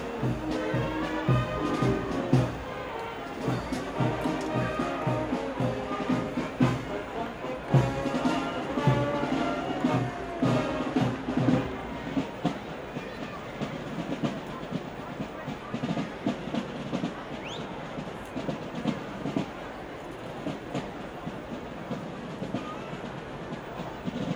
Zürich, Bellevue, Schweiz - Umzug
Die Zünfte treffen bei dem Sechseläuteplatz ein. Volk, Blechmusik, Trommel
Sechseläuten ist ein Feuerbrauch und Frühlingsfest in Zürich, das jährlich Mitte oder Ende April stattfindet. Im Mittelpunkt des Feuerbrauchs steht der Böögg, ein mit Holzwolle und Knallkörpern gefüllter künstlicher Schneemann, der den Winter symbolisiert.